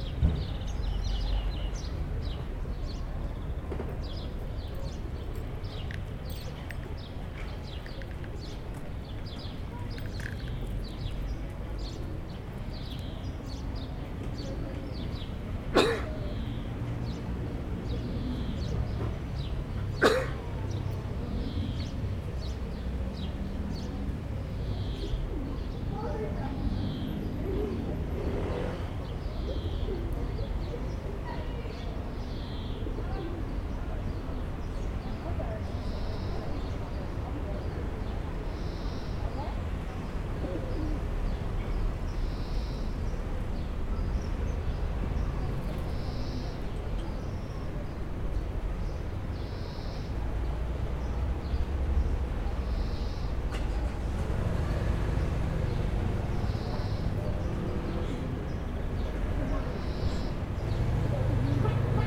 Pl. Georges Clemenceau, Aix-les-Bains, France - Le triangle des pigeons
Trois cèdres délimitent un triangle refuge pour les pigeons, un verdier et quelques moineaux complètent l'avifaune, dans les véhicules de passage on distingue le bruit de moteur caractéristique de la voiturette sans permis fabriquée à Aix, l'Aixam.
Auvergne-Rhône-Alpes, France métropolitaine, France, 9 July, 11:45